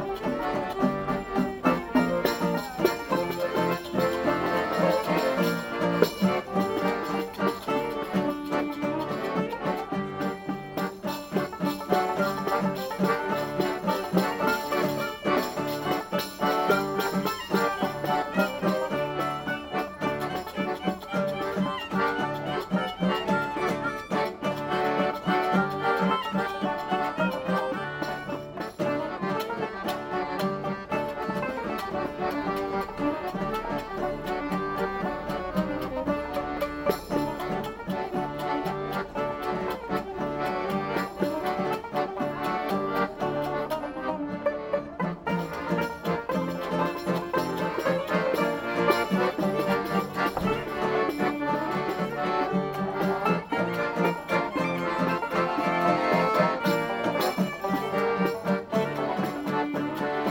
Berlin - Gudruns Kulturraum, Klezmer sounds
the recordist, on his way home, was attracted by klezmer like sounds in front of Gudruns Kulturraum.